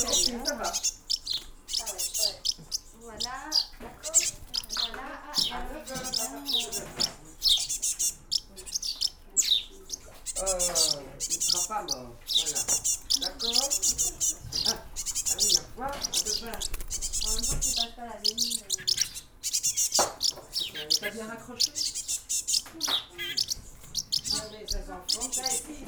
Ottignies-Louvain-la-Neuve, Belgique - Birdsbay, hospital for animals
Birdsbay is a center where is given revalidation to wildlife. It's an hospital for animals. In this recording, nothing special is happening, the recorder is simply disposed in a cage. You can hear juvenile tit, very juvenile blackbird and juvenile sparrow. At the backyard, some specialists put bats in transportation cages.
Ottignies-Louvain-la-Neuve, Belgium, July 2016